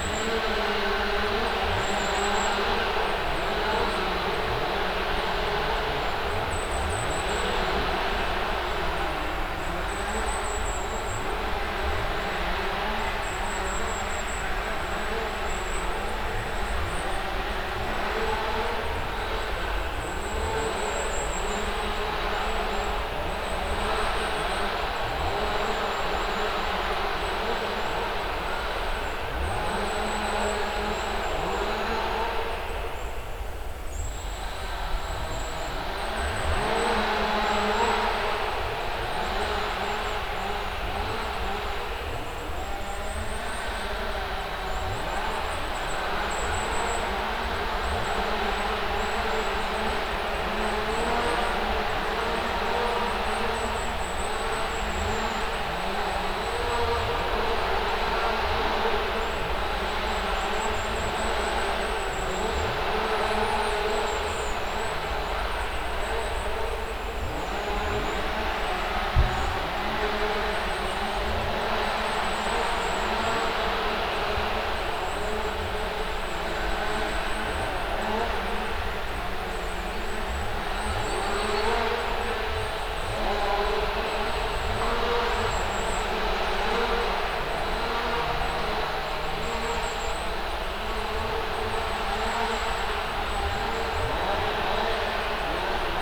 Sudeikių sen., Lithuania, woodcutters
mechanical woodcutters chorus in the forest's ambience
Voverynė, Lithuania, 30 September 2015, 11:45